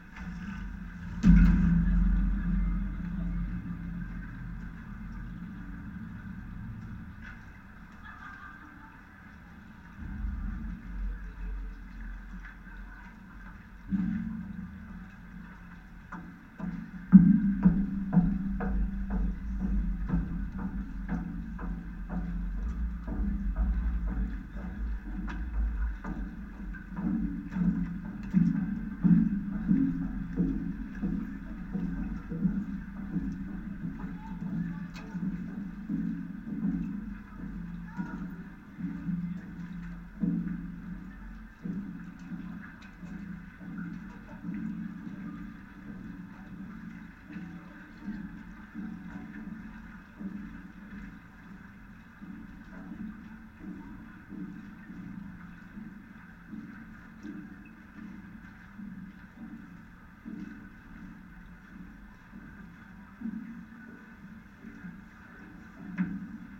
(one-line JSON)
{"title": "Fluxus bridge, Vilnius, Lithuania, contact", "date": "2019-10-18 17:40:00", "description": "contact microphones on metalic construction of so-called Fluxus Bridge", "latitude": "54.68", "longitude": "25.30", "altitude": "96", "timezone": "Europe/Vilnius"}